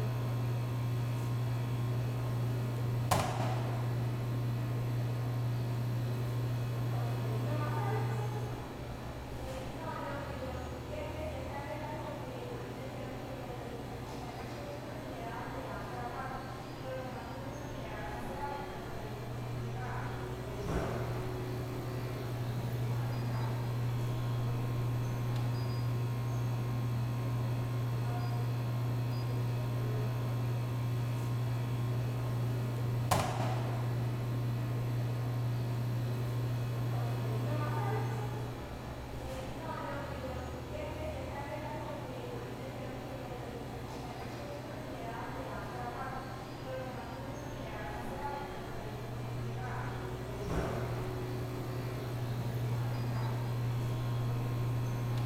Cra., Medellín, Antioquia, Colombia - Lobby bloque 11, Universidad de Medellín
Descripción
Sonido tónico: Lobby bloque 11
Señal sonora: Maquinas dispensadoras
Grabado por Santiago Londoño Y Felipe San Martín
Antioquia, Región Andina, Colombia